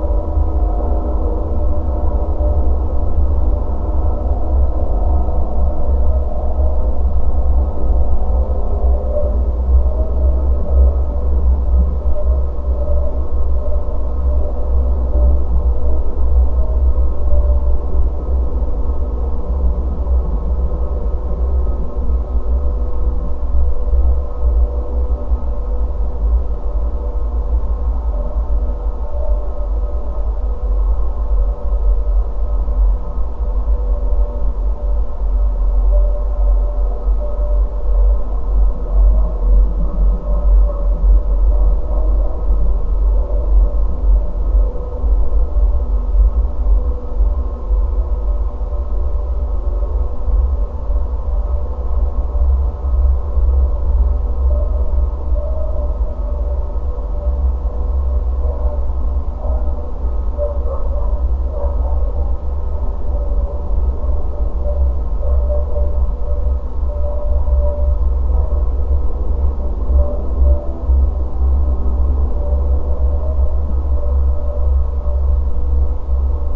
Vilnius, Lithuania, lifts tower
winter skiing tracks. geophone on lift's tower